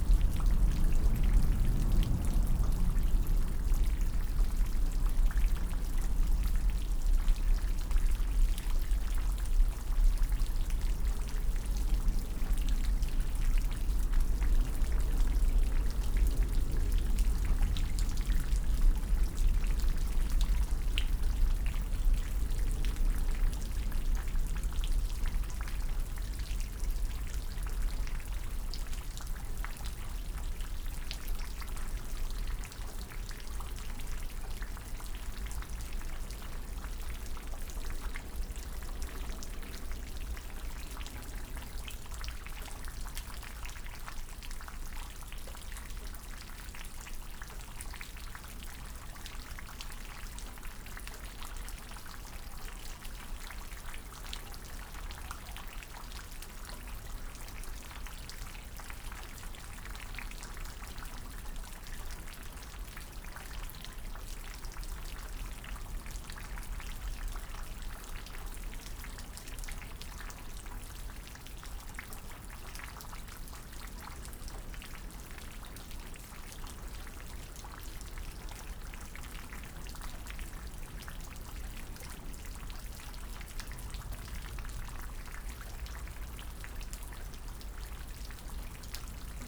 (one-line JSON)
{"title": "Brussels, Belgium - Constant rain, fast trains, low planes", "date": "2016-02-09 11:11:00", "description": "Haren is an old village on the border of Brussels, which has undergone huge changes in recent decades. A beautiful 16th century farmhouse can still be seen, there are fields and houses with large gardens. Once it was famous as a chicory growing area. Now it is surrounded by railways, motorways and the international airport. Controversy rages over the building of a new prison here. On this day it is raining again, as it has for the last 3 weeks.", "latitude": "50.89", "longitude": "4.42", "altitude": "31", "timezone": "Europe/Brussels"}